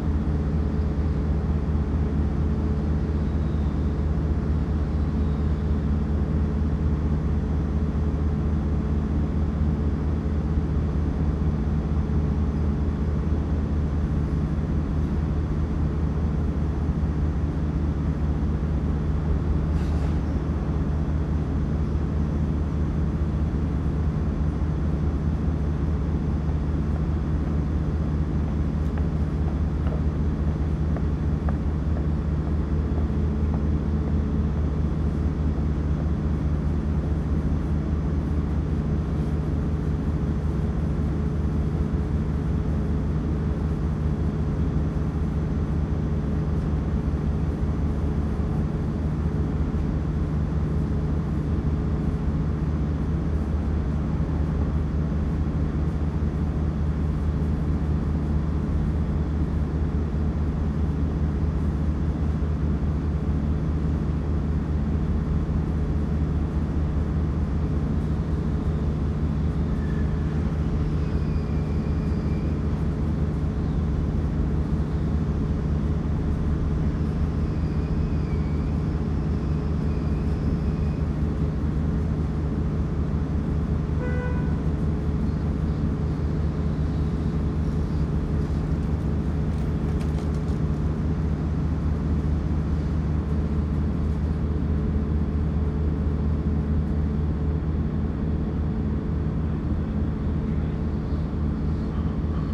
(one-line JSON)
{"title": "berlin: friedelstraße - the city, the country & me: sewer works", "date": "2013-11-01 09:50:00", "description": "generator of a mobile concrete plant\nthe city, the country & me: november 1, 2013", "latitude": "52.49", "longitude": "13.43", "altitude": "46", "timezone": "Europe/Berlin"}